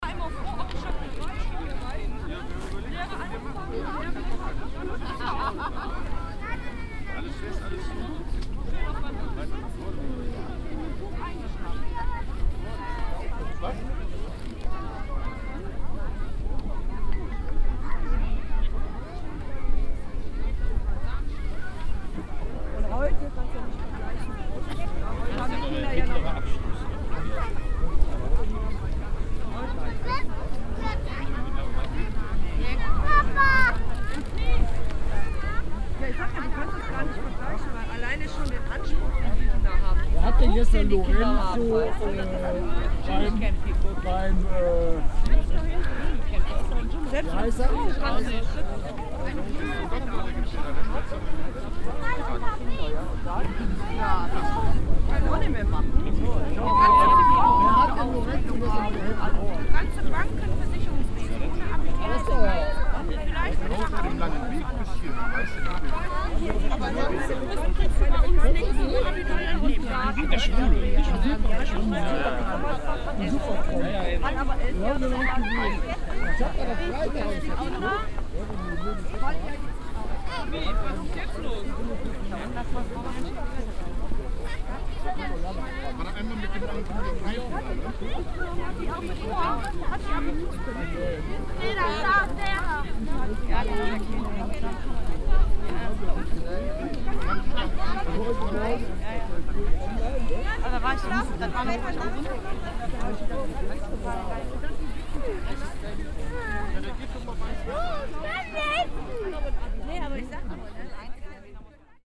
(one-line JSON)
{"title": "Düsseldorf, am Schürberg, Schlittenhang - düsseldorf, am schürberg, schlittenhang", "date": "2009-01-12 16:32:00", "description": "Ein Sonntag im Winter, mittags am prominenten Rodelhang der Region, grösseres Volksaufkommen am zentralen Abfahrtshügel\nsoundmap nrw - topographic field recordings, listen to the people", "latitude": "51.27", "longitude": "6.86", "altitude": "97", "timezone": "Europe/Berlin"}